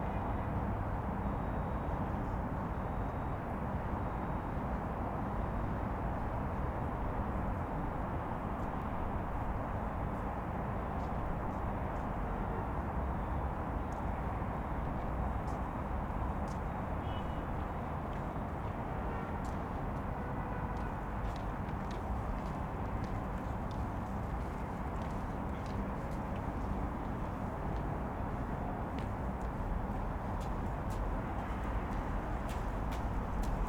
ул. Тимирязева, Челябинск, Челябинская обл., Россия - Chelyabinsk, evening, a small traffic of cars, passing people
the square in front of the drama theater, not far from the main square of the city.
Very few people on the street.
Уральский федеральный округ, Россия, 22 February 2020